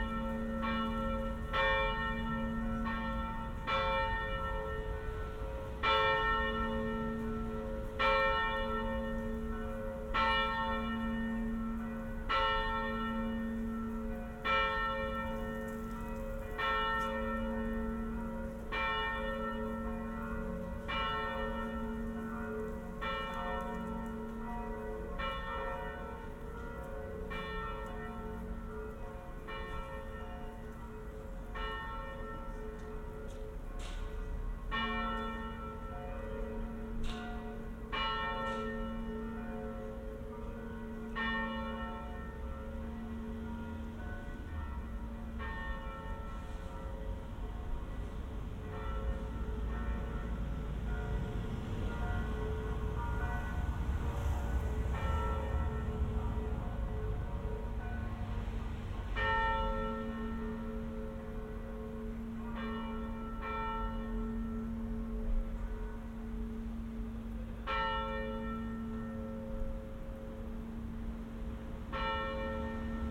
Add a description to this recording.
Binaural recording of Redemptorists' church bells at noon on Sunday. Recorded with Sennheiser Ambeo headset.